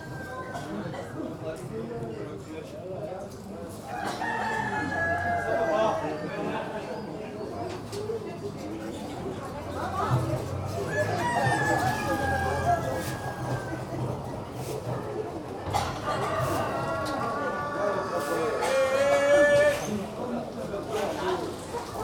Rahba Kedima, Medina, Marrakesch - animal market
loads of chickens and roosters, strong smell and sound. not totally sure about the location though... it's difficult to navigate and remember landmarks in the dense Medina.
(Sony D50, OKM2)
Marrakesh, Morocco